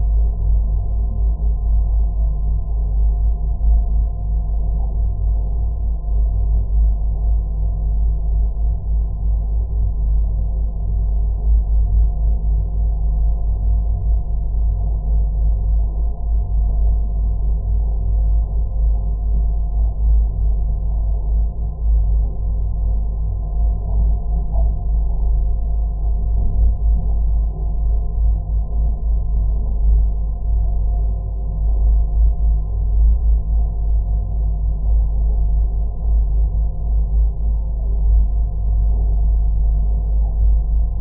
M/S Jaarli, Turku, Finland - A moving boat through a horizontal metal bar
M/S Jaarli sailing on the river Aura in Turku. Recorded with LOM Geofón attached with a magnet to a thin horizontal metal bar near the bow of the boat. Zoom H5.